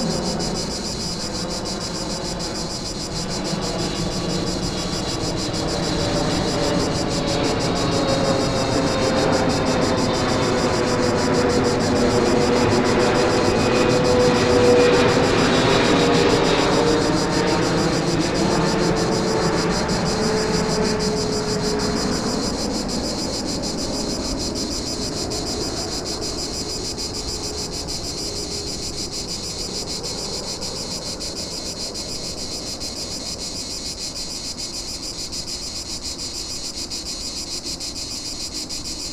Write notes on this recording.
Cicadas near mid day, really loud, transit rumble and ocasional planes passing by. Recorded in XY stereo mode with a pair of cardioid oktava mics and a Tascam DR70.